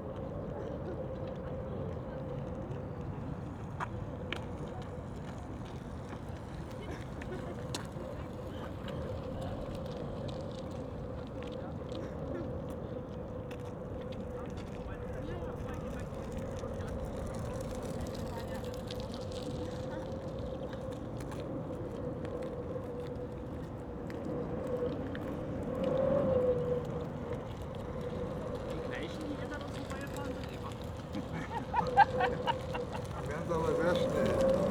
wind in fence on Templehof airfield